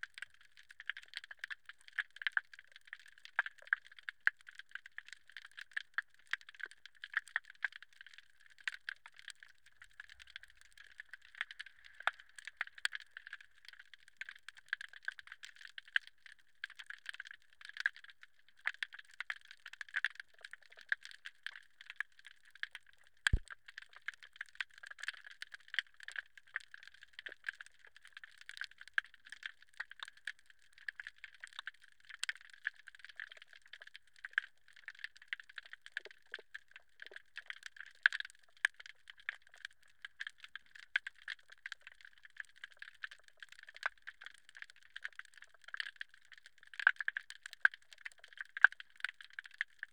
Gáldar, Gran Canaria, underwater calm
hydrophones in the calm creek
Gáldar, Las Palmas, Spain, 25 January, 16:30